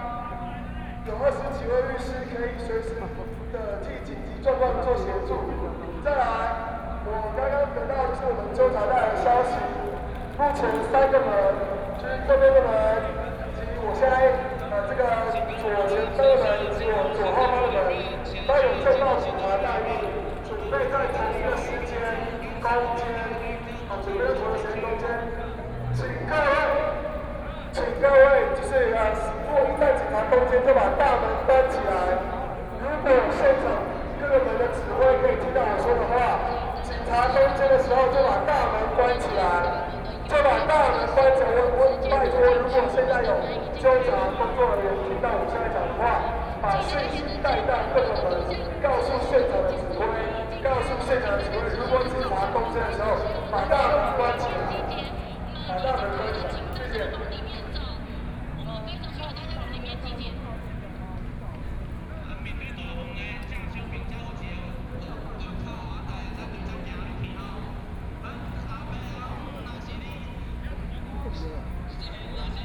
Executive Yuan, Taipei City - Student activism
Student activism, Walking through the site in protest, People and students occupied the Executive Yuan
Zhongzheng District, Taipei City, Taiwan, 23 March